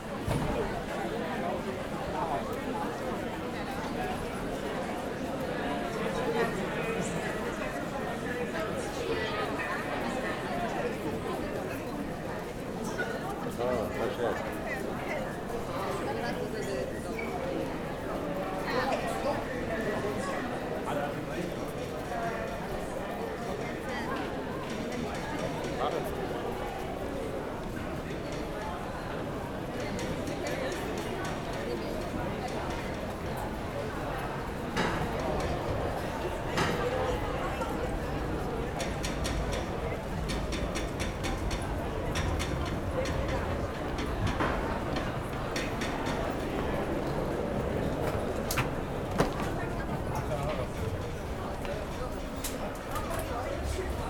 South Ferry Plaza, Whitehall St, New York, NY, USA - Boarding on the Staten Island Ferry, a Soundwalk
Soundwalk: Boarding on the Staten Island Ferry.
14 April 2018, 10:00